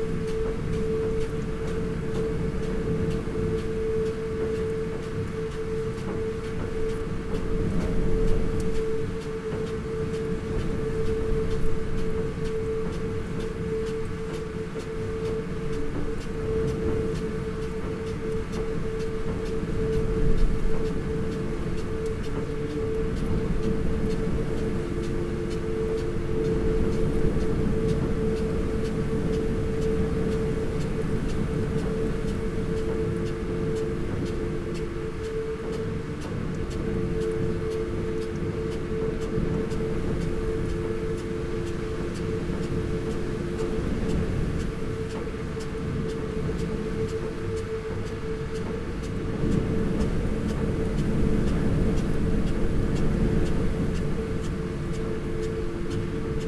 Green Ln, Malton, UK - field irrigation system ...
field irrigation system ... an eco star 4000S system unit ... this controls the water supply and gradually pulls the sprayer back to the unit ... dpa 4060s in parabolic to MixPre3 ...